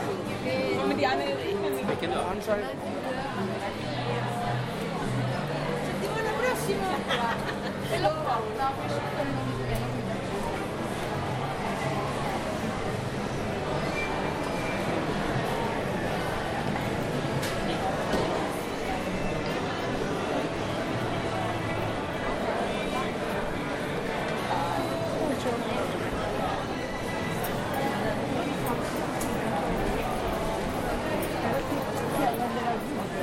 Pantheon, Piazza della Rotonda, Rome, Italy - Walking to Pantheon
Walking up Via dei Pastrini past musicians and tourists ending at the fountain, Fontana del Pantheon